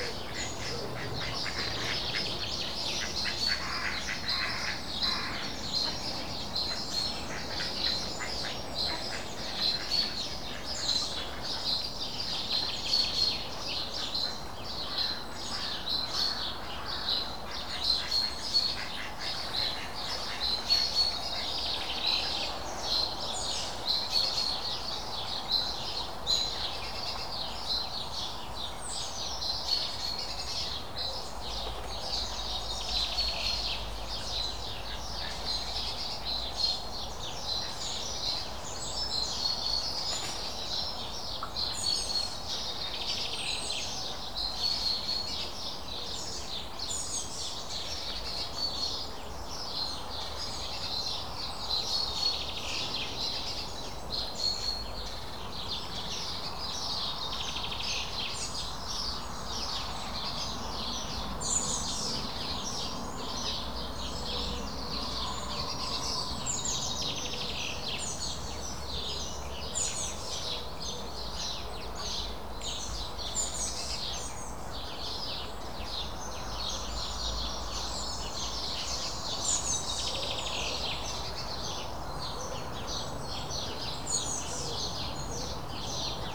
River Frome, Dorchester, UK - Sounds of the riverside on a Sunday morning
Sat on a bench at 5.45 on a Sunday morning. A dog walker passes and says something. Other sounds are wildlife and the A35 in the distance.
18 June 2017, 05:45